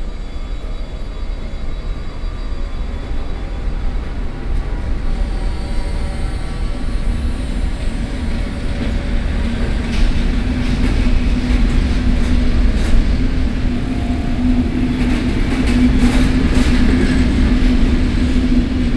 Brussels, Gare du Nord / Noordstation.
Schaerbeek, Belgium, 13 August 2009